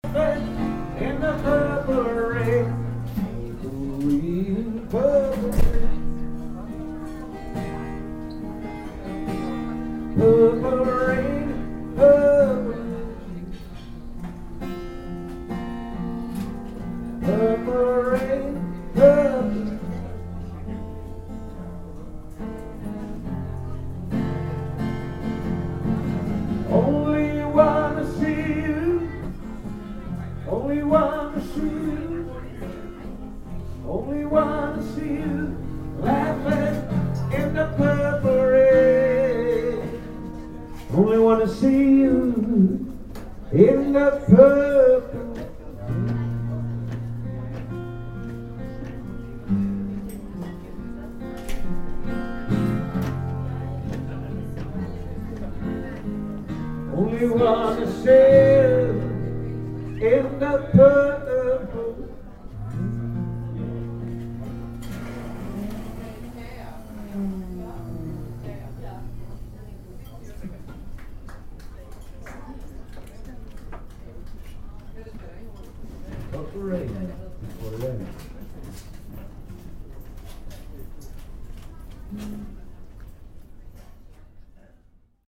baltic sea, night ferry, solo entertainer covering prince song
recorded on night ferry trelleborg - travemuende, august 10 to 11, 2008.